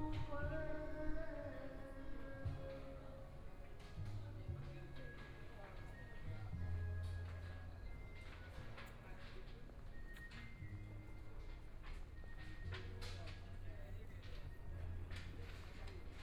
{"title": "Liyu (Carp) Mountain Park - in the Park", "date": "2014-01-16 10:46:00", "description": "Dialogue among the elderly, Singing sound, Old man playing chess, Binaural recordings, Zoom H4n+ Soundman OKM II ( SoundMap2014016 -5)", "latitude": "22.75", "longitude": "121.14", "timezone": "Asia/Taipei"}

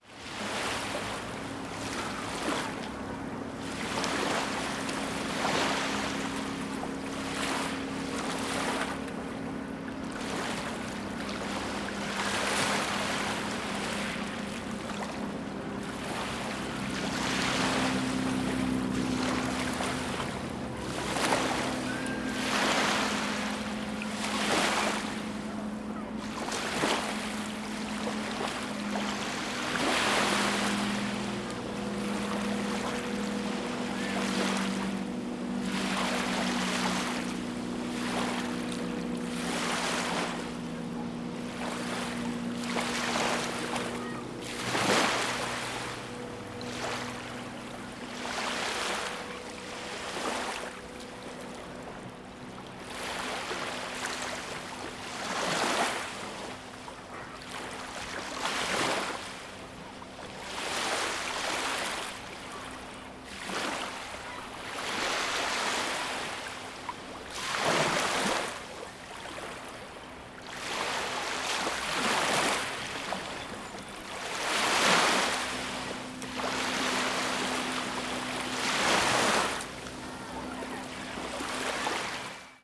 {"title": "South Beach, South Haven, Michigan, USA - South Beach", "date": "2021-07-19 21:07:00", "description": "Waves crashing over steel sheet piling on the beach", "latitude": "42.40", "longitude": "-86.28", "altitude": "178", "timezone": "America/Detroit"}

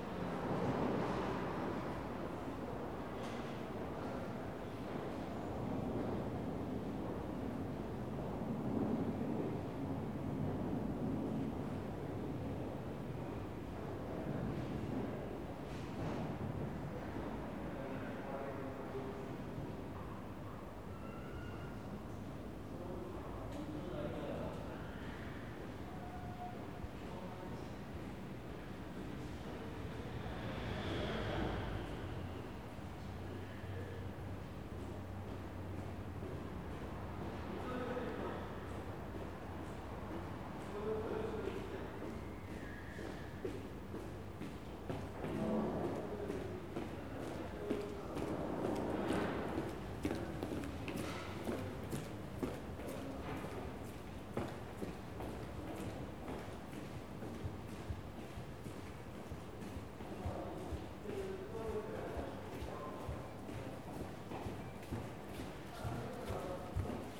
{"title": "Gaillon, Paris, France - Passage Choiseul, Paris", "date": "2016-07-14 18:32:00", "description": "Quiet sounds inside the Passage Choiseul, Paris.\nThe occasional sounds of footsteps crossing the arcade.\nThe stores were closed because of the national holiday - Bastille Day.\nZoom H4n", "latitude": "48.87", "longitude": "2.34", "altitude": "50", "timezone": "Europe/Paris"}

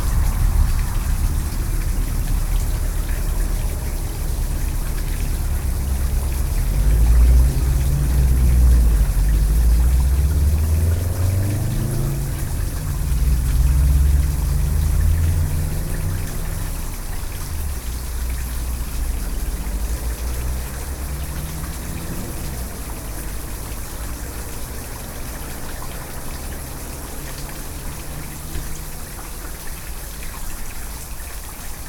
{"title": "Plymouth, UK - Entrance to Kinterbury Creek", "date": "2013-12-07 09:00:00", "latitude": "50.40", "longitude": "-4.19", "altitude": "21", "timezone": "Europe/London"}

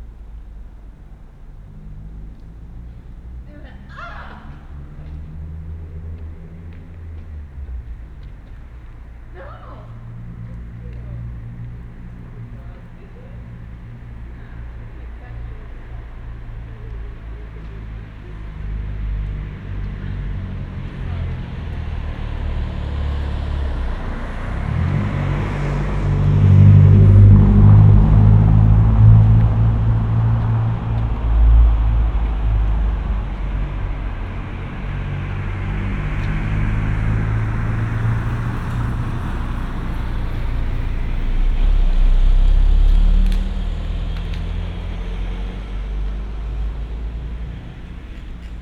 {"title": "Berlin: Vermessungspunkt Friedelstraße / Maybachufer - Klangvermessung Kreuzkölln ::: 15.06.2012 ::: 02:36", "date": "2012-06-15 02:36:00", "latitude": "52.49", "longitude": "13.43", "altitude": "39", "timezone": "Europe/Berlin"}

